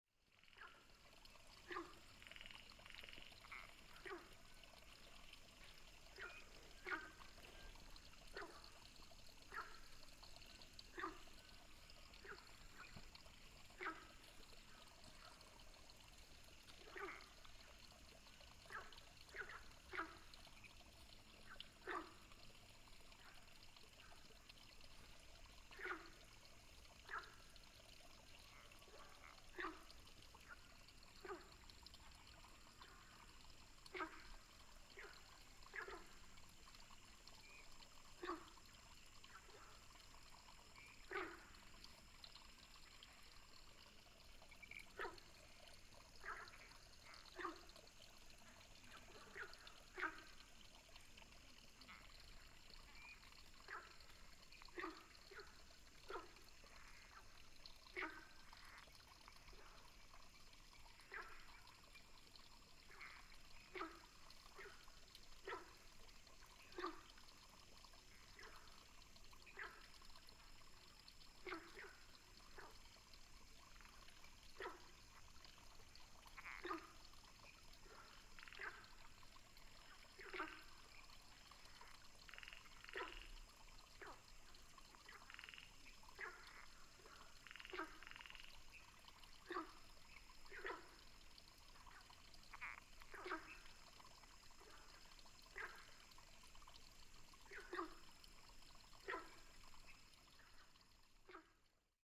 三角崙, 魚池鄉五城村, Nantou County - Frogs chirping
Frogs chirping, Sound of water